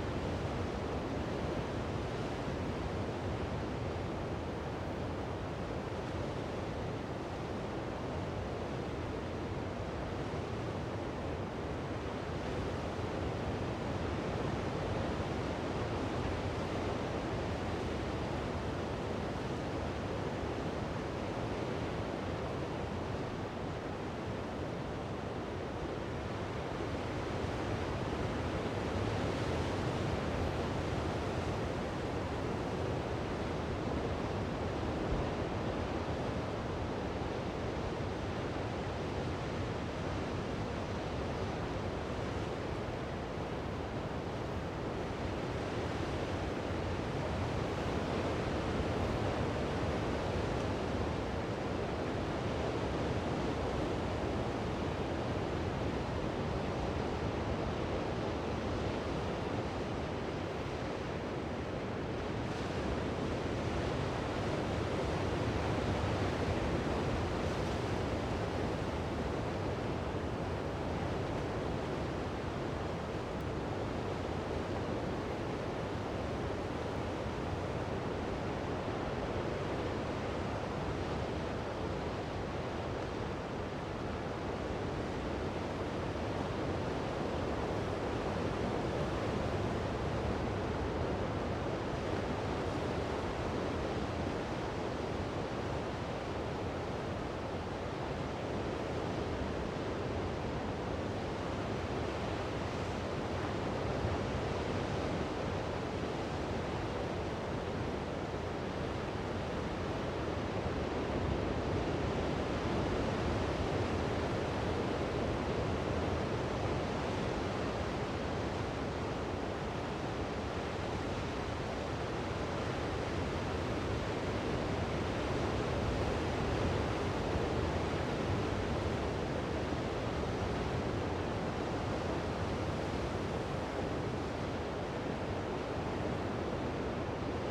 This is a recording of the beach near to Lancoyén. I used Sennheiser MS microphones (MKH8050 MKH30) and a Sound Devices 633.